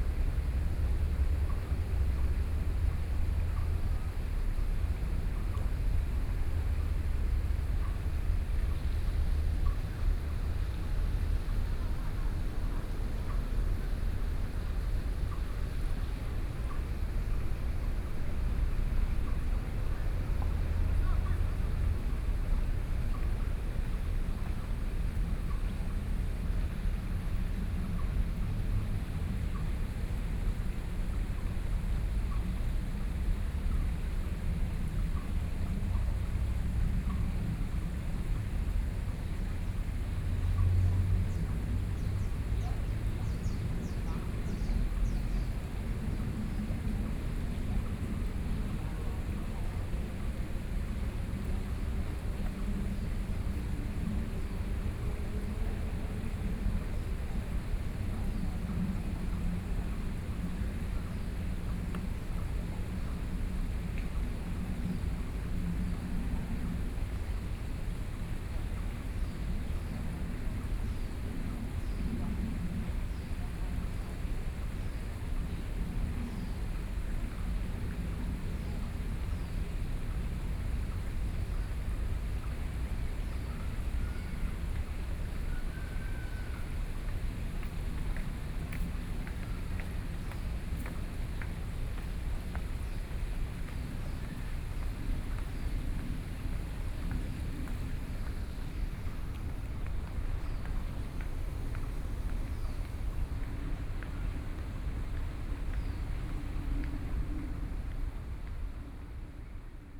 Taipei Botanical Garden - Hot and humid afternoon
Hot and humid afternoon, in the Botanical Garden, Sony PCM D50 + Soundman OKM II
Taipei City, Taiwan